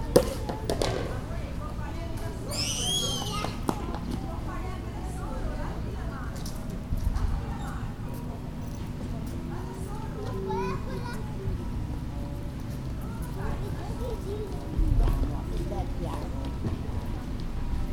campo s. pietro, castello, venezia